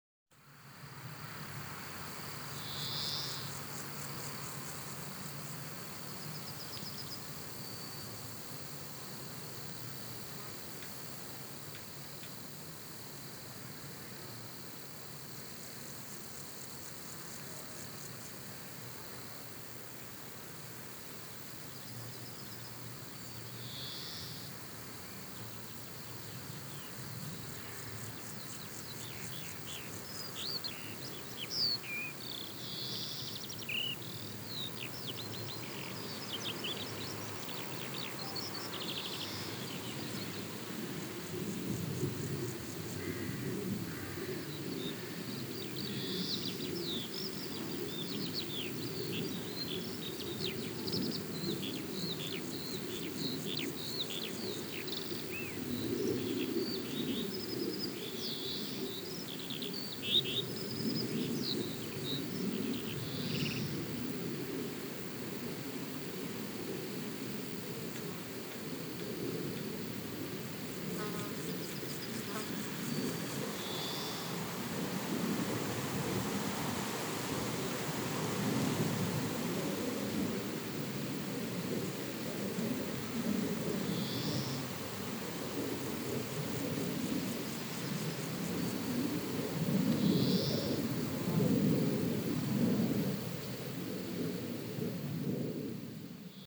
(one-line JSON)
{"title": "knaphochscheid, hill, morning wind", "date": "2011-08-10 23:02:00", "description": "In the morning time on a hill close to the village Knaphochscheid. A mellow wind moving the trees accompanied by cicades, birds and bee sounds and a plane crossing the sky.\nKnaphoscheid, Hügel, Morgenwind\nMorgens auf einem Hügel nahe des Dorfes Knaphoscheid. Ein sanfter Wind bewegt die Bäume, begleitet von Zikaden, Vögeln und Bienen und ein Flugzeug überquert den Himmel.\nKnaphoscheid, colline, vent du matin\nLe matin, sur une colline proche du village de Knaphoscheid. Un vent doux fait bouger les arbres, accompagné du bruit des cigales, des oiseaux et des abeilles, avec un avion qui traverse le ciel.\nProject - Klangraum Our - topographic field recordings, sound objects and social ambiences", "latitude": "50.02", "longitude": "5.97", "altitude": "391", "timezone": "Europe/Luxembourg"}